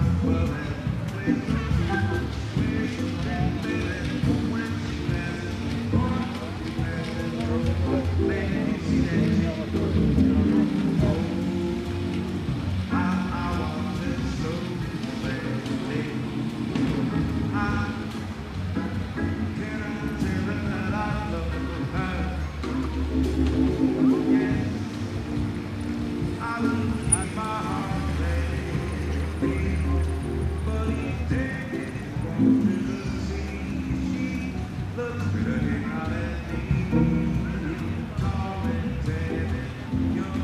Todos los años vamos a dar un paseito por Gandía, es una playa muy turística pero con tal de de estar cerca del mar... me vale!!jejeje Fuimos a coger unos helados y paseando por el paseo marítimo empezamos a escuchar a unos músicos de un hotel tocar Jazz, así que nos paramos a escucharles mientras tomabamos el heladillo. Me ha encantado ese contraste del trasiego de la gente, probablemente muchos ajenos a la música, y sin embargo, había varías personas sentadas fuera del hotel escuchando a los músicos, eramos pocos pero... me hizo disfrutar mucho de ese momento y esa mezcla entre el trasiego y el crear una pequeña burbuja para escuchar solo y únicamente a los músicos. Puedo decir que aunque parezca una tontería... ha sido un momento muy especial para mi... :)
Comunitat Valenciana, España